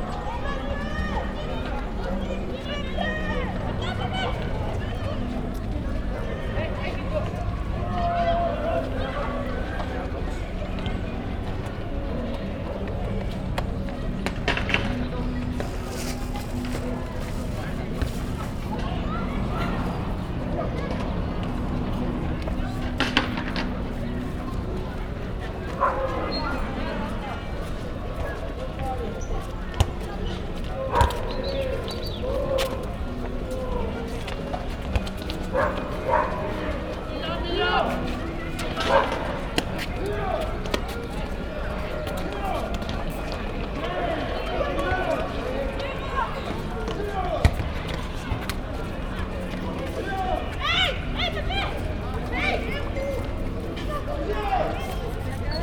Av México s/n, Hipódromo, Cuauhtémoc, Ciudad de México, CDMX, Mexiko - Parque México
During our(katrinem and I) longer stay in Mexico City, we often visited this park